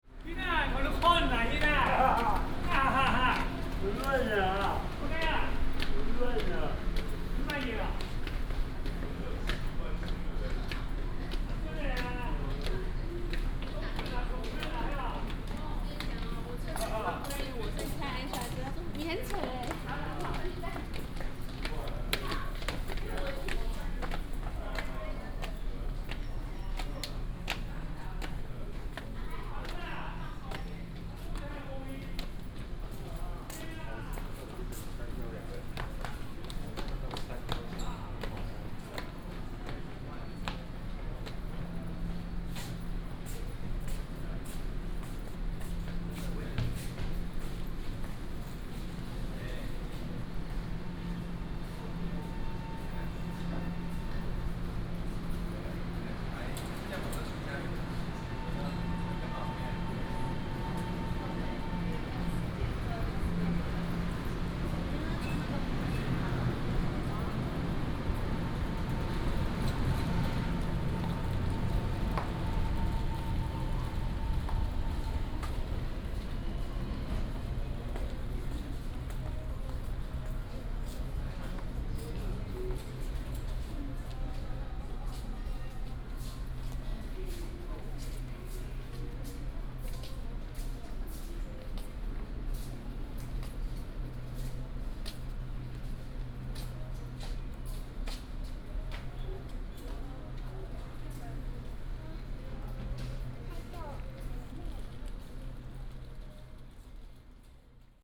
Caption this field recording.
Walk at the station, Footsteps